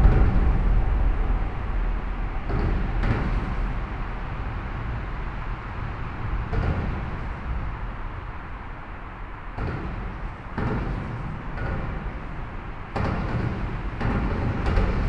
Bruxelles, Belgium - Vilvoorde viaduct
Sound of the Vilvoorde viaduct below the bridge.